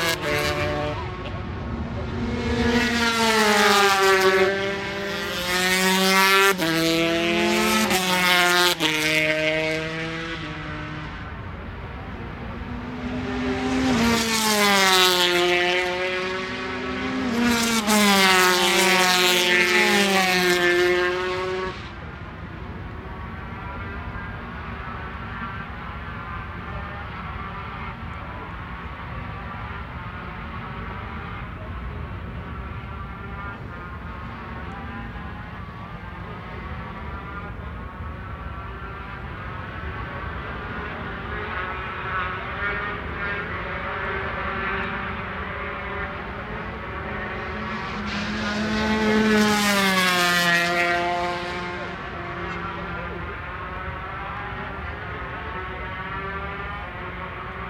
Castle Donington, UK - British Motorcycle Grand Prix 2003 ... 250 ...
British Motorcycle Grand Prix 2003 ... 250 qualifying ... one point stereo mic to mini-disk ... commentary ... time approx ...
Derby, UK, 12 July